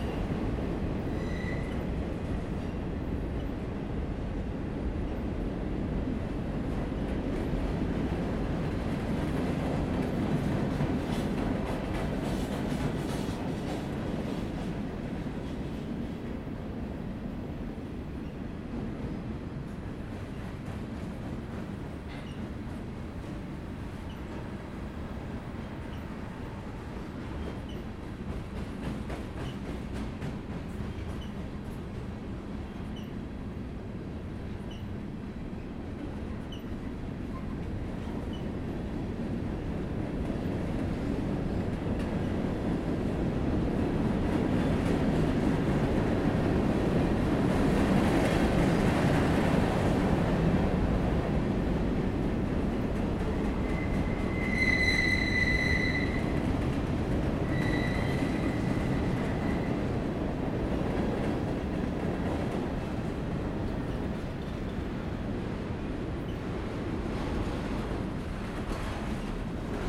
Mid-Town Belvedere, Baltimore, MD, USA - Toot Toot!
CSX Freight train passing recorded with H4n Zoom
13 November, 14:30